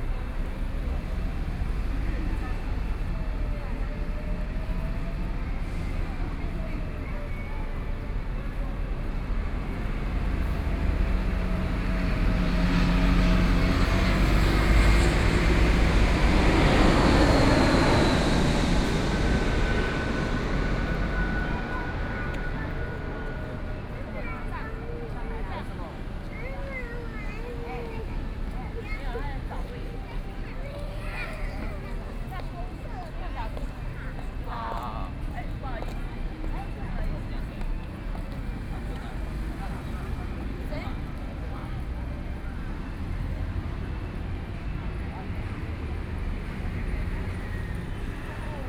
中山區圓山里, Taipei City - MRT train sounds
MRT train sounds, Aircraft flying through, Traffic Sound